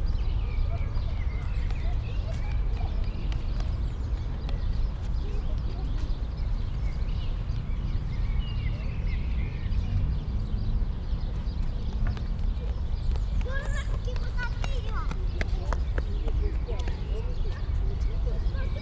Pedios Areos, park, Athen - ambience, traffic drone
park ambience, distant traffic roar, omnipresent in Athens, heard on top of an abandoned fountain.
(Sony PCM D50, DPA4060)
Athina, Greece